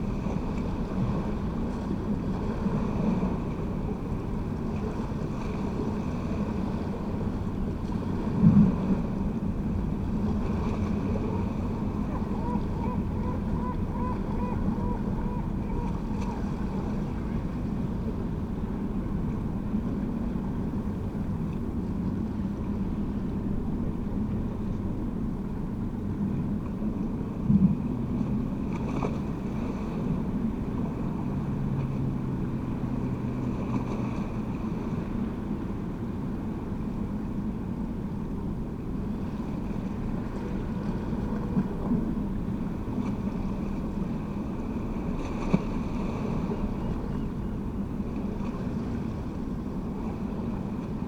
East Lighthouse, Battery Parade, Whitby, UK - drainage runnel ...
drainage runnel ... small gap in brickwork to allow rainwater run off ... purple panda lavs clipped to sandwich box to LS 14 ... bird calls ... oystercatcher ... herring gull ... redshank ... turnstone ... background noise ... footfall ... voices ...
England, United Kingdom